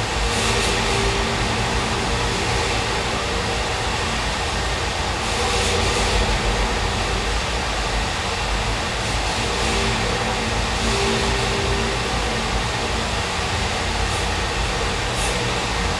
{
  "title": "Hastedter Osterdeich, Bremen, Germany - Metal enclosure",
  "date": "2020-05-13 13:30:00",
  "description": "Recording the sounds from a contact microphone on a metal enclosure, picking up the reverb of water flowing through the channels of a hydroelectric power plant.",
  "latitude": "53.06",
  "longitude": "8.87",
  "altitude": "3",
  "timezone": "Europe/Berlin"
}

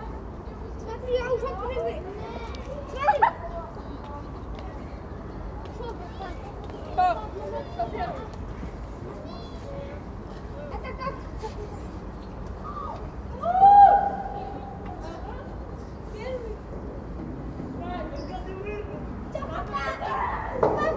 {
  "title": "Children's adventure playground, Vltavanů, Praha, Czechia - Children's adventure playground",
  "date": "2022-04-09 13:56:00",
  "description": "This playground has some rather high rope walkways leading to steep slides back to the ground, so sitting nearby the sounds of children exploring the possibilities regularly come from above your head. It was a cold and stormy day so not many were here, but they were obviously enjoying it. The right mix of excitement and scariness.",
  "latitude": "50.03",
  "longitude": "14.40",
  "altitude": "195",
  "timezone": "Europe/Prague"
}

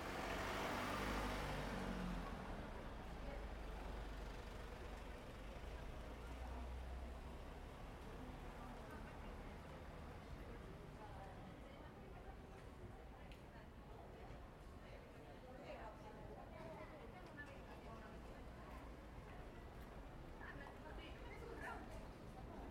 {
  "title": "Śródmieście, Gdańsk, Polska - You're an artist",
  "date": "2014-09-20 13:01:00",
  "description": "Recorded near the Main Town marketplace, the place is the new night life/pub spaces in the city. Recorded with Zoom H2n, by Mikołaj Tersa",
  "latitude": "54.35",
  "longitude": "18.65",
  "altitude": "9",
  "timezone": "Europe/Warsaw"
}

